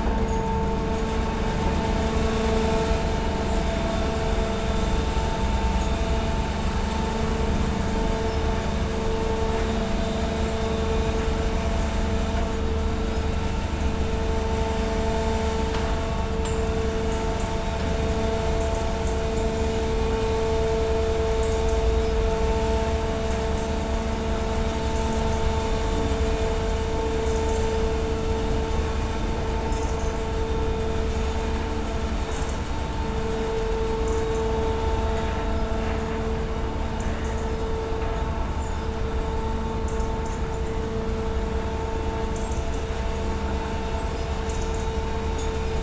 Amfreville-sous-les-Monts, France - Poses sluice
The sluice door opening, letting go a boat inside the sluice.